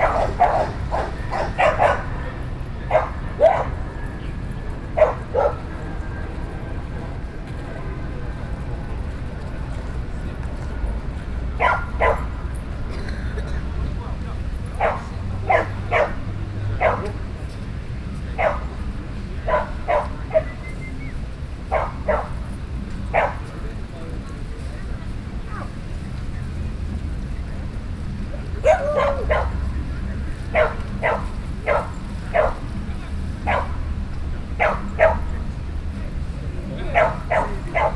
New York, Washington Square, dogs reserved place.
Manhattan, NY, USA, 8 September 2010, ~11:00